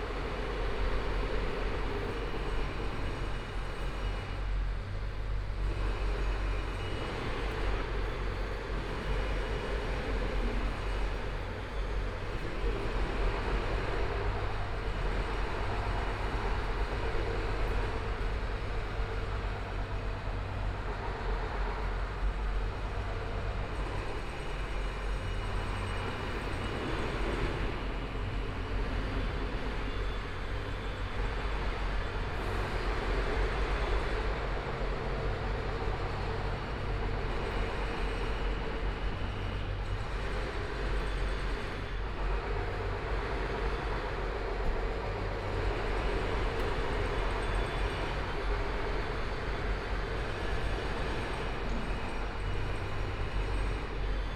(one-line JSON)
{"title": "台北市中山區晴光里 - Construction site sounds", "date": "2014-02-10 15:32:00", "description": "Construction site sounds, Traffic Sound, Motorcycle Sound, Pedestrian, Clammy cloudy, Binaural recordings, Zoom H4n+ Soundman OKM II", "latitude": "25.07", "longitude": "121.52", "timezone": "Asia/Taipei"}